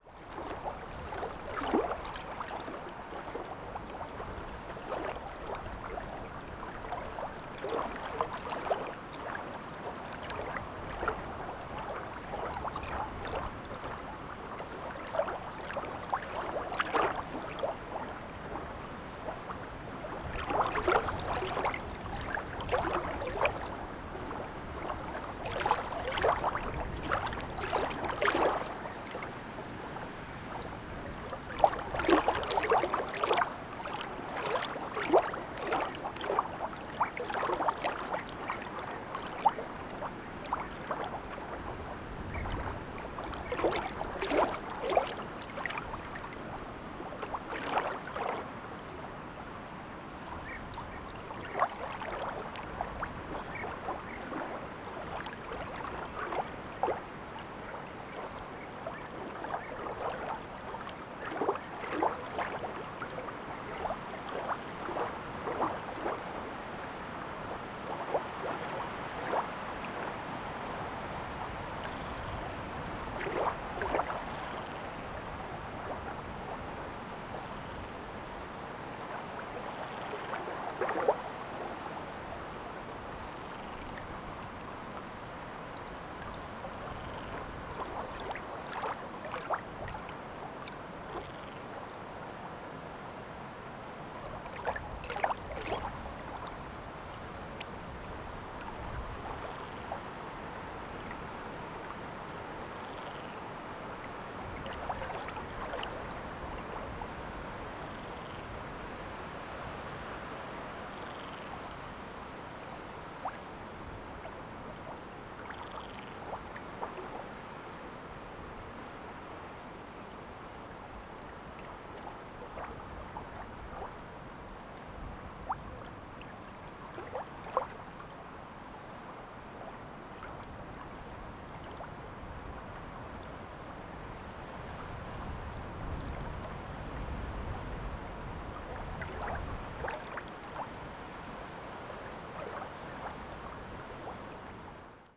Montargil, Ponte de Sor Municipality, Portugal - pond and fliyng birds
small pond, water rustling, flyby birds and crickets, Foros do Mocho, Montargil, mono, rode NTG3 shotgun, Fostex FR2 LE